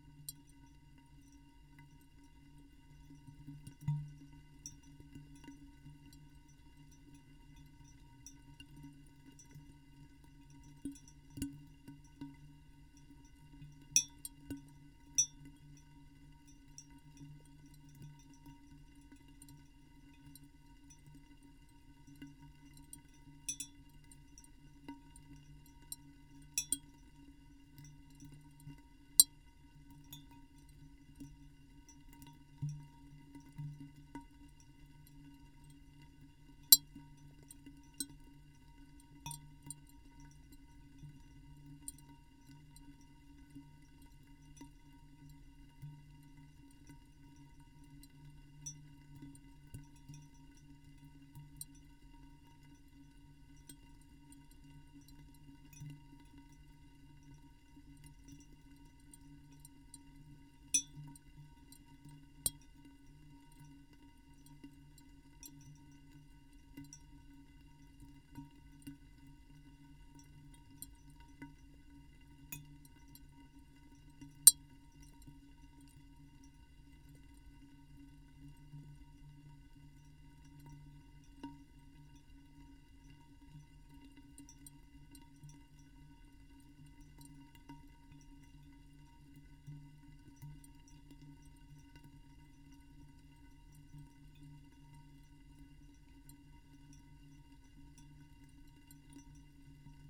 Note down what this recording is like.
two small omnis in two empty bottles...rain is starting...